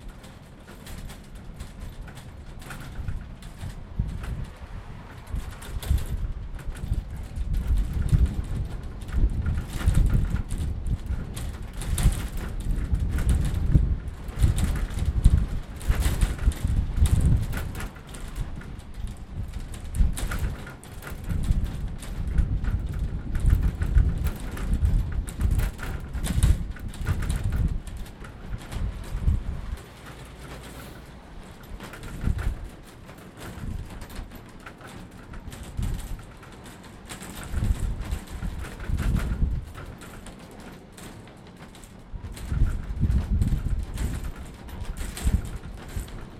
Sandown, Isle of Wight, UK - bus shelter in high wind
Number 8 bus shelter, sound of perspex windows rattling against metal frames in high winds. Crashing of the tide audible as well as the wind. Zoom H2n, handheld, recorded whilst standing inside the shelter